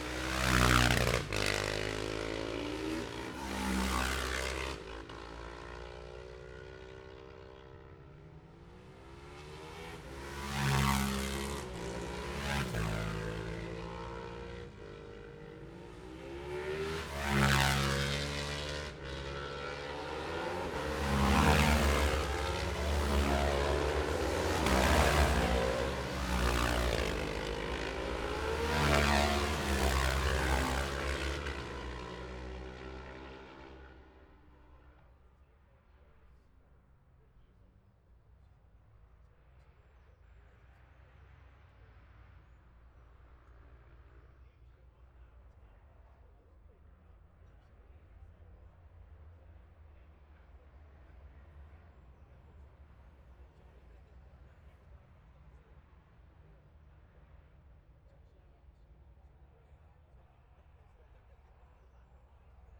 Jacksons Ln, Scarborough, UK - olivers mount road racing ... 2021 ...
bob smith spring cup ... twins group B practice ... dpa 4060s to MixPre3 ...
22 May 2021, 10:32